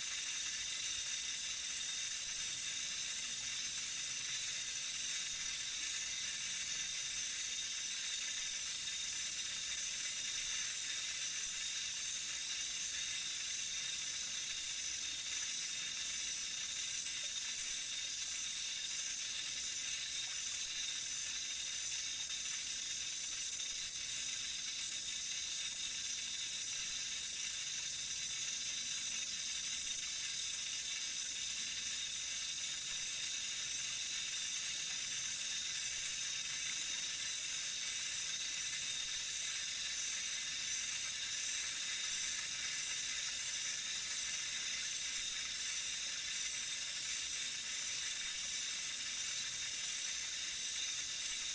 between the Lincoln Park Zoo and the Lincoln Park Conservatory